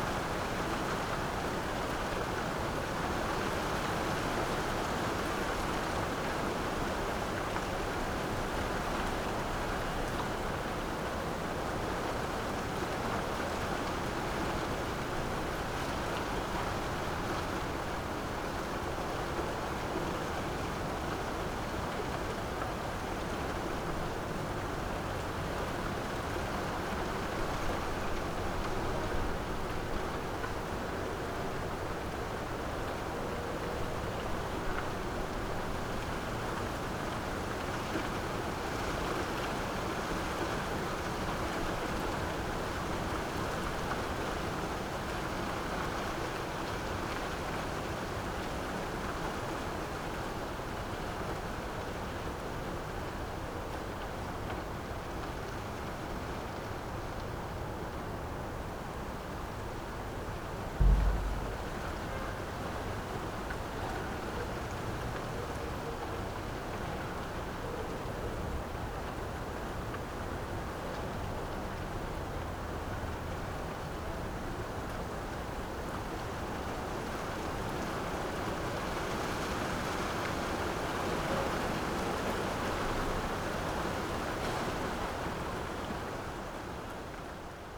Arset Ben Chebi, Marrakesch, Marokko - wind in palm trees
Marrakesh, garden Riad Denise Masson, wind in palm trees at night.
(Sony PCM D50)